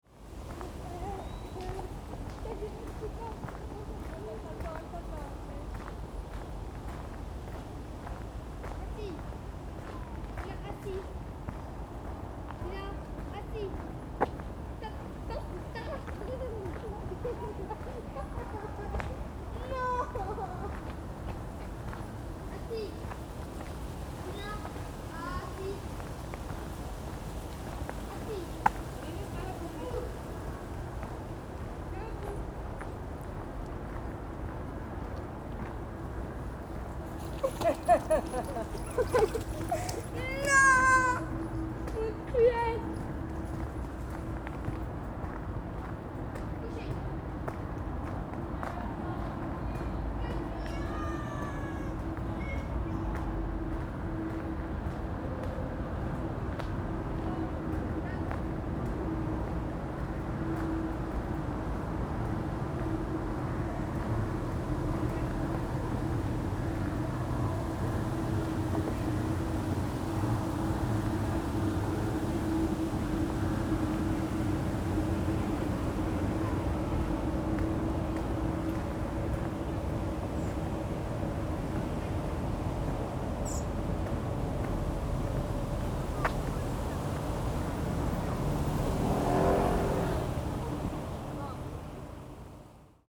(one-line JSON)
{"title": "Anderlecht, Belgium - Verheydenstr walk5 gravel path leading to Jacque Brel metro", "date": "2016-10-15 15:27:00", "description": "This part of Verheydenstr has been made into a crunchy gravel path with lines of trees. A boy is frustrated by his dog refusing to give up the ball to throw again. Traffic gets louder nearer the Metro station.", "latitude": "50.85", "longitude": "4.32", "altitude": "37", "timezone": "Europe/Brussels"}